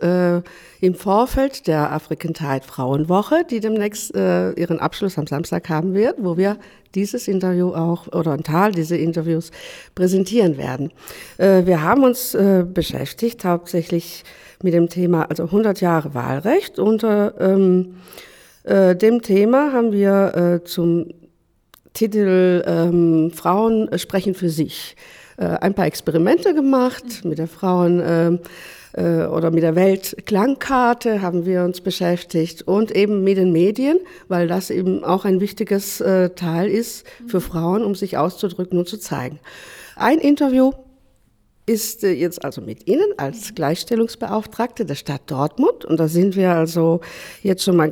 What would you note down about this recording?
we are joining Slavi as she enters the office of Maresa Feldmann in the city hall of Dortmund. Maresa Feldmann represents a city office which promotes parity for women. She introduces herself and discusses with Slavi the importance and responsibilities of her job... "women had to asked their husband if they wanted to take up a job... it's all not so long ago...", the recording was produced during a three weeks media training for women in a series of events at African Tide during the annual celebration of International Women’s Day.